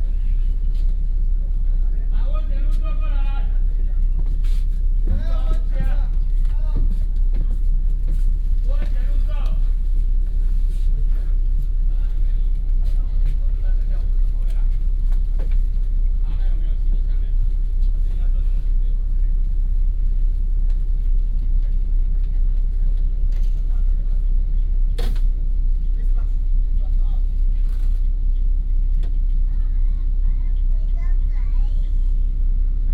Puli Township, Nantou County - Inside the bus
Inside the bus
Nantou County, Taiwan, 6 May 2016